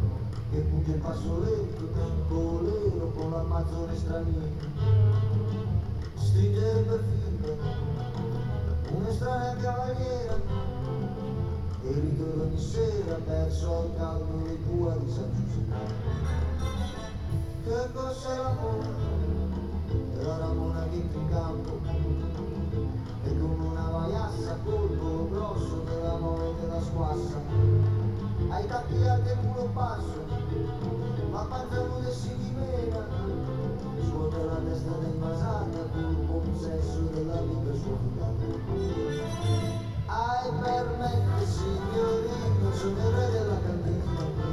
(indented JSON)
{
  "title": "Ascolto il tuo cuore, città. I listen to your heart, city. Several chapters **SCROLL DOWN FOR ALL RECORDINGS** - Autumn Playlist on terrace in the time of COVID19: Soundscape",
  "date": "2020-11-14 13:33:00",
  "description": "\"Autumn Playlist on terrace in the time of COVID19\": Soundscape\nChapter CXLII of Ascolto il tuo cuore, città. I listen to your heart, city\nSaturday November 14th, 2020. Fixed position on an internal terrace at San Salvario district: from the building South, last floor, amplified music resonates at high volume. Turin, eight day of new restrictive disposition due to the epidemic of COVID19.\nStart at 1:33 p.m. end at 2:18 p.m. duration of recording 45'03''",
  "latitude": "45.06",
  "longitude": "7.69",
  "altitude": "245",
  "timezone": "Europe/Rome"
}